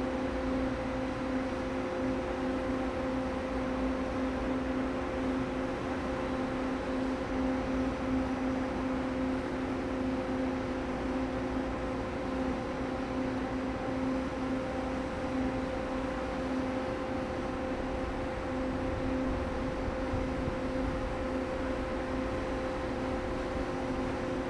Kaohsiung Station - the train noise
Before starting the train noise, Sony ECM-MS907, Sony Hi-MD MZ-RH1
高雄市 (Kaohsiung City), 中華民國, 25 February